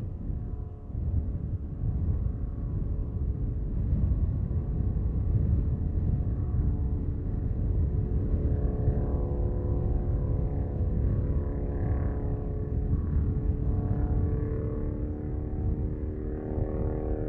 Norton Triumph passing Bikehochzwei, Heidestr. 20b, 10557 Berlin